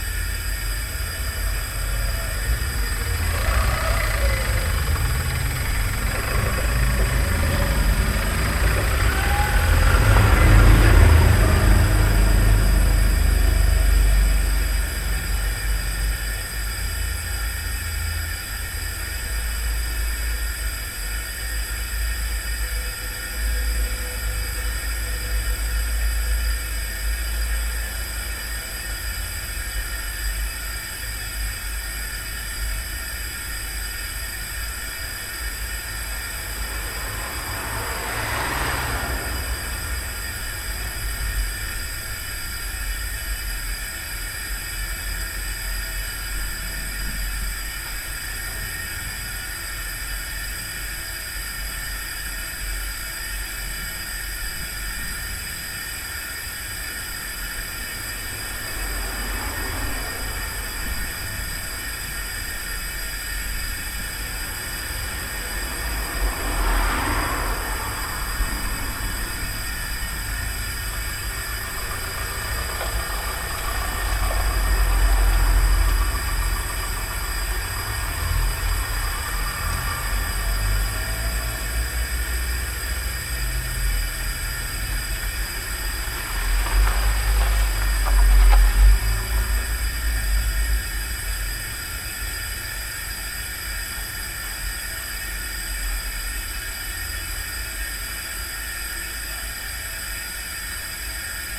Sandėlių g., Kaunas, Lithuania - Large gas box
Combined stereo field and dual contact microphone recording of a big industrial gas pipe box. Steady hum of gas + cars driving nearby. Recorded with ZOOM H5.